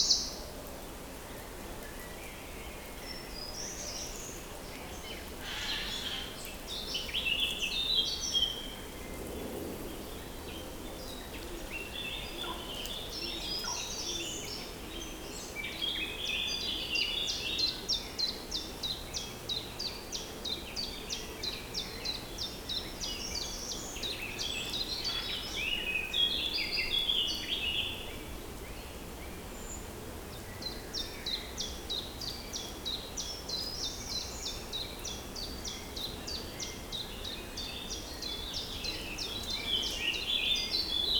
Sierakow, at Lutomskie Late, path in the Buki nature reserve - forest ambience
spring forest ambience in Buki nature reserve. (roland r-07)
1 May, ~12:00